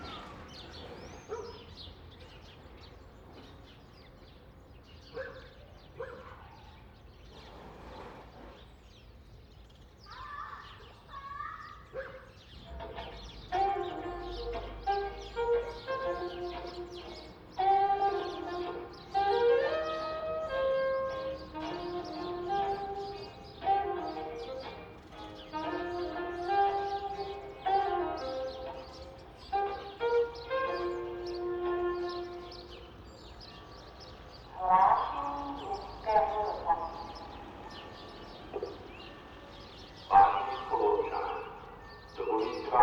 Alšova, Židlochovice, Czechia - City radio announcements in Židlochovice
City radio announcement. The mayor of the town speaks to seniors because of Covid 19. He offers help. There is also a challenge when the town of Židlochovice is looking for volunteers for sewing masks. Recorded in Židlochovice, South Moravia by Tomáš Šenkyřík